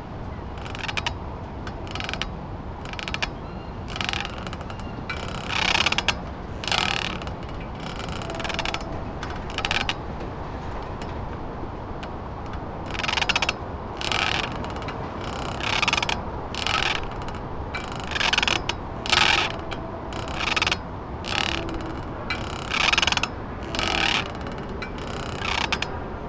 Water, Wood & Steel, Seattle, WA, USA - Water, Wood & Steel
Incoming swells moving a steel ladder, it's brackets squeaking against a damp wood pylon, which has been slowly coming loose over the years.
Sony PCM-MD50
August 2013